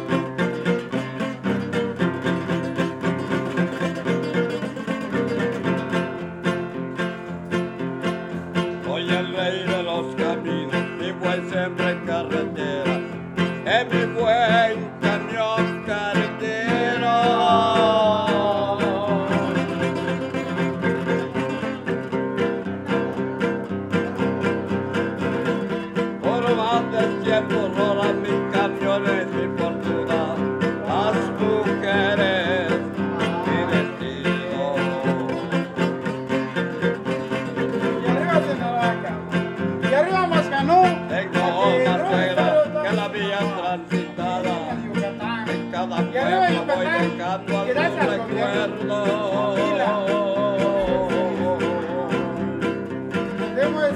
Maxcanú - Mexique
À l'intérieur du marché central - musicien